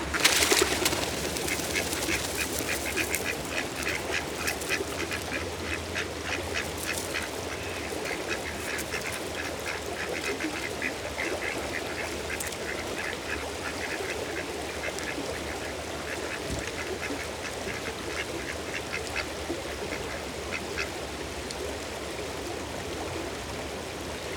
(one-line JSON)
{"title": "Walking Holme Duck Flap", "date": "2011-04-20 13:28:00", "description": "Home of the Ducks.", "latitude": "53.57", "longitude": "-1.78", "altitude": "152", "timezone": "Europe/London"}